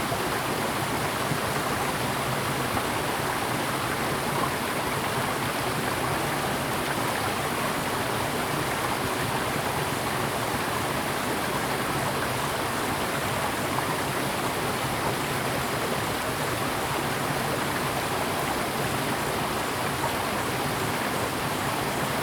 種瓜坑溪, 埔里鎮桃米里, Taiwan - Stream sound
Stream sound
Zoom H2n MS+XY
Puli Township, Nantou County, Taiwan